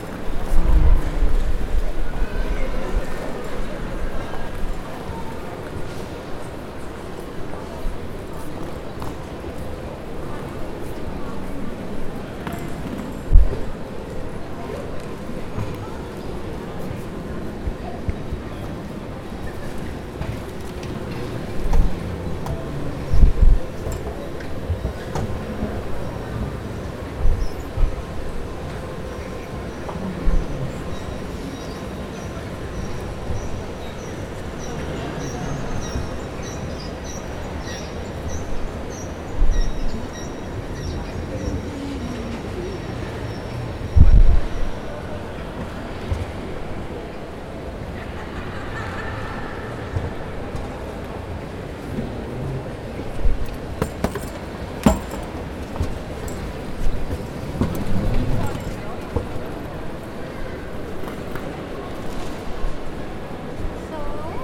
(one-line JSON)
{"title": "Ankunft und Aussteigen in Basel", "date": "2011-07-09 18:20:00", "description": "Basel Bahnhof, Ausstieg und Weiterfahrt im Tram", "latitude": "47.55", "longitude": "7.59", "altitude": "258", "timezone": "Europe/Zurich"}